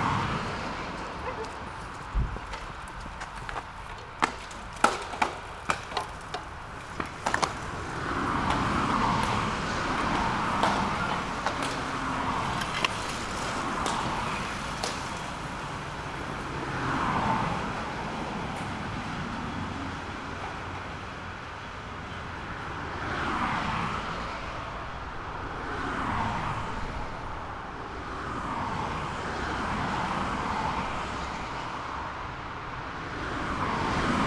Berlin Buch, Deutschland - Autobahn, narrow underpass
narrow Autobahn underpass, a group of cyclists stop in order to let pedestrians walk through. Sound of passing-by cars
(Sony PCM D50)